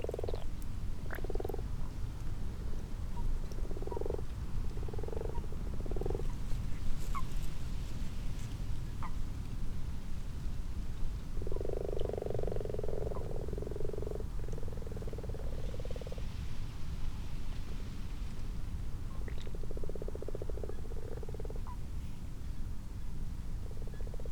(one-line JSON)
{"title": "Malton, UK - frogs and toads ...", "date": "2022-03-13 00:15:00", "description": "common frogs and toads in a garden pond ... xlr sass on tripod to zoom h5 ... time edited unattended extended recording ... background noise from a cistern filling up ..?", "latitude": "54.12", "longitude": "-0.54", "altitude": "77", "timezone": "Europe/London"}